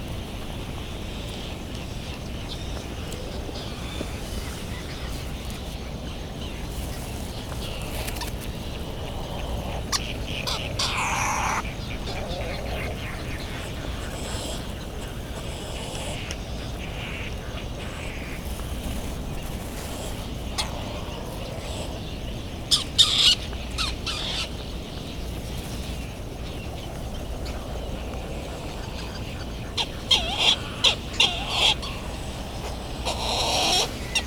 Hawaiian Islands, USA - Bonin Petrel Soundscape
Sand Island ... Midway Atoll ... On the path to the All Hands Club ... Sand Island ... Midway Atoll ... recorded in the dark ... open lavalier mics ... calls and flight calls of Bonin Petrel ... calls and bill claps from Laysan Albatross ... white tern calls ... cricket ticking away the seconds ... generators kicking in and out in the background ...